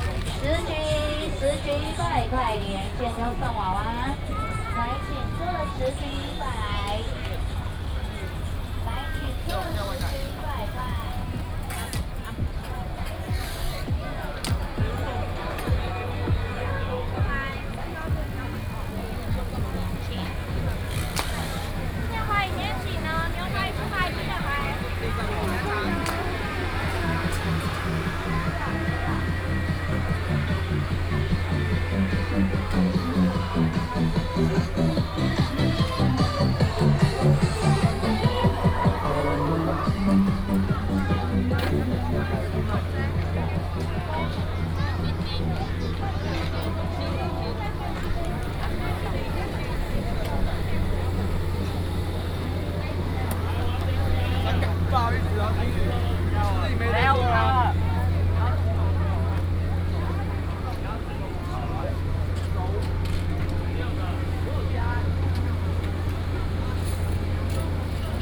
Walking in the night market, traffic sound, vendors peddling, Binaural recordings, Sony PCM D100+ Soundman OKM II
6 October 2017, 18:46